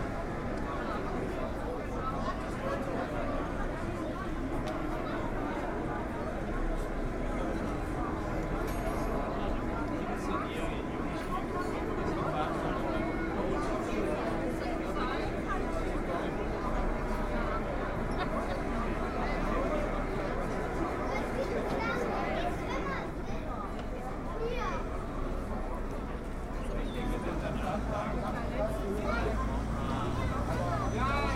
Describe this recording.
essen-steele: straßenfest kaiser-otto-platz